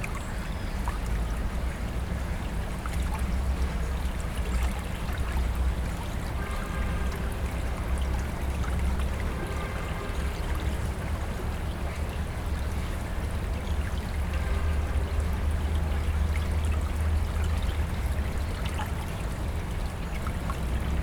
Pina, Recife - PE, República Federativa do Brasil - Pina Mangrove 02
First Recordings about the project Mangroves sound. Record using a H4n with the coworker Hugo di Leon.